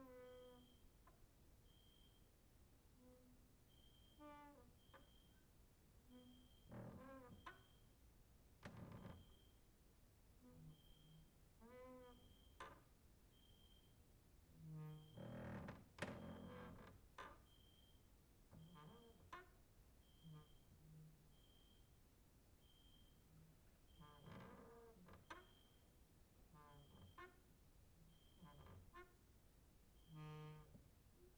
cricket outside, exercising creaking with wooden doors inside
Maribor, Slovenia, 6 August 2012